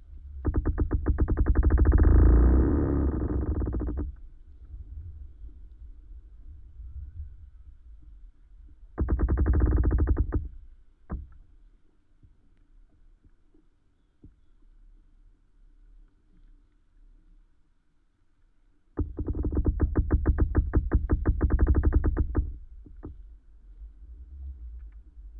Utena, Lithuania, sounding pine tree
windy day. pine trees swaying and touching each other. contact microphone recording
2 October 2021, 15:40